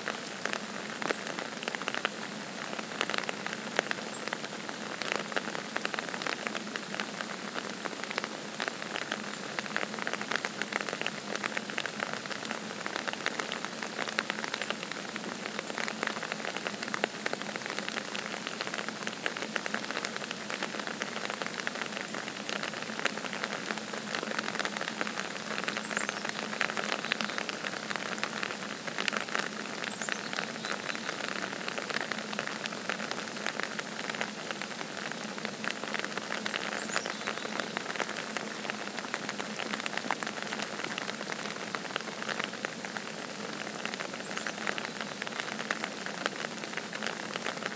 New Paltz, NY, USA - Rain Under Trees
The trees in front of College Hall at SUNY New Paltz are a great gathering area for students to gain some shade from the sun. This recording was taken during a rainy day to capture the natural sounds surrounding College Hall. The recording was taken using a Snowball condenser microphone, under an umbrella, and edited using Garage Band on a MacBook Pro.